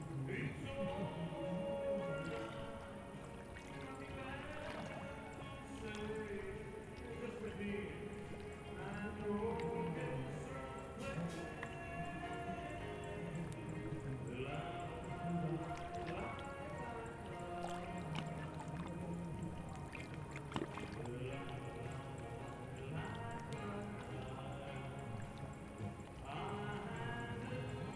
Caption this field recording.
The Zoom H4n recorder was placed close to the surface of the sea, and slow waves can be heard. In the distance a terrace singer is performing a song.